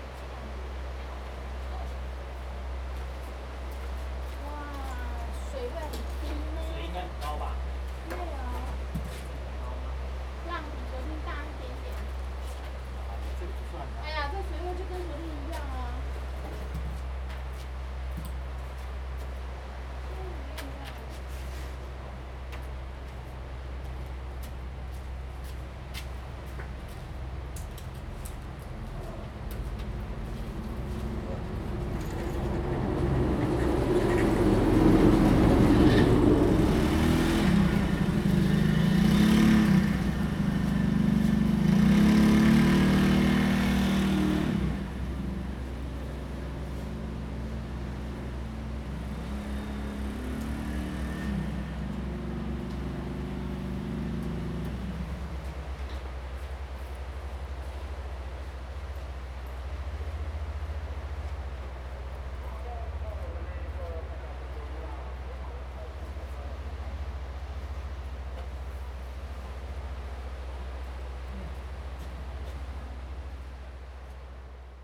Shihlang Diving Area, Lüdao Township - On the coast
On the coast, Sound of the waves
Zoom H2n MS +XY